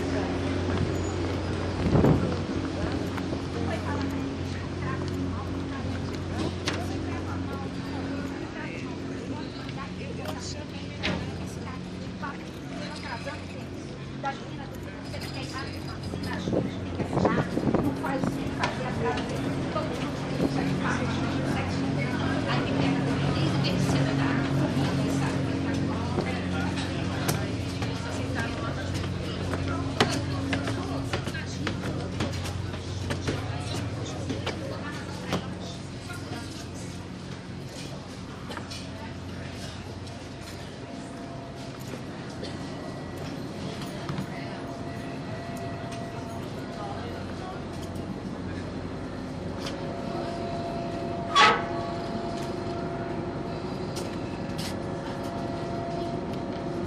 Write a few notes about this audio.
Recording the environment ferry terminal in Niterói: Araribóia Square, waiting room and passenger space inside the boat. The recording was made with a mini-digital recorder. Gravação do ambiente do terminal de barcas da cidade de Niterói: Praça Araribóia, sala de espera dos passageiros e espaço interno da barca. A gravação foi realizada com um mini-gravador digital.